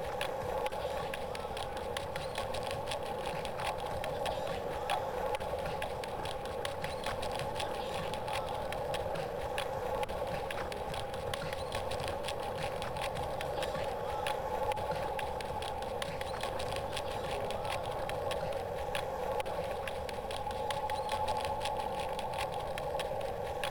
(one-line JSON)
{"title": "Montreal: Lachine Canal: Charlevoix bridge - Lachine Canal: Charlevoix bridge", "date": "2002-01-28", "description": "Constructed from ambience recorded on the Charlevoix bridge over the canal east of the Atwater market. Car tires against the textured metal surface of the bridge produce this distinctive thrum, which are looped to enhance the existing rhythms of traffic. It was a cold dry day, with ice underfoot on the empty cycle path up to the bridge.", "latitude": "45.48", "longitude": "-73.57", "altitude": "12", "timezone": "America/Montreal"}